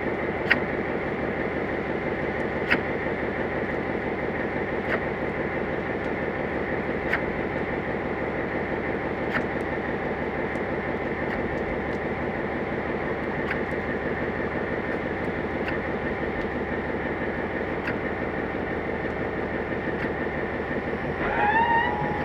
Tilos Island, Greece - Tilos Diagoras
When the large ferry boat that links the small island of Tilos to Piraeus arrives in port, the smaller yachts and fishing boats have to leave their berths while it unloads cars and passengers. this recording captures this process. small boats start engines and leave around 3', Diagoras arrives around 12' and leaves around 23'30". Aquarian audio hydrophone / Tascam DR40